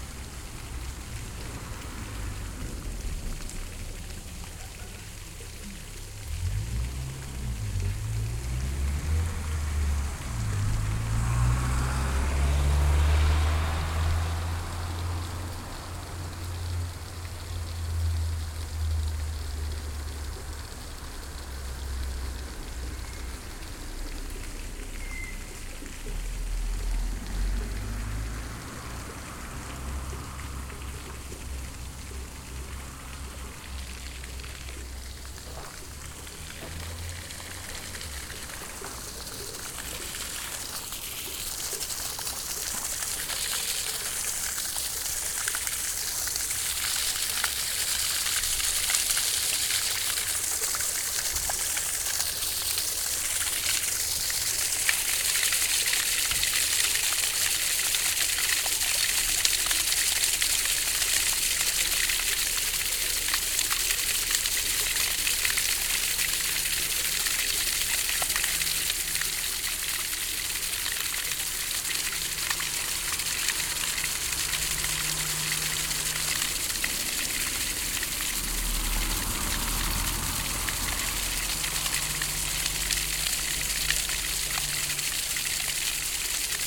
Manheim, village center - fountain, churchbells
Manheim, village center, on a a bench near a fountain, church bells at 8pm. Manheim will dissappear from 2020 on because of the expanding opencast mining north, Tagebau Hambach.
(Sony PCM D50, DPA4060)